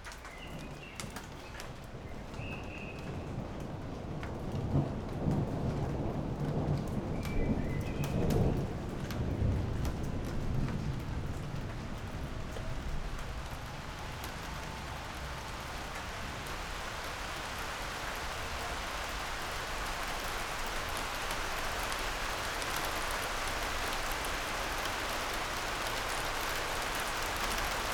thunder approaches, it starts to rain.
(Sony PCM D50)
Berlin, Germany